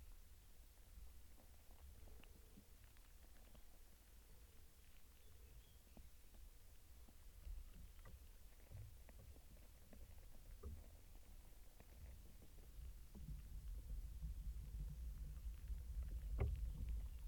Thorndon, Eye, Suffolk UK - decaying tree
Ganderwick is a small patch of woodland which in Celtic times was a lake with ferry crossing. This woodland is a haven for wildlife amidst vast industrial monocrops. Here is a vast tree in decay busy with hidden life.
Stereo pair Jez Riley French contact microphones + SoundDevicesMixPre3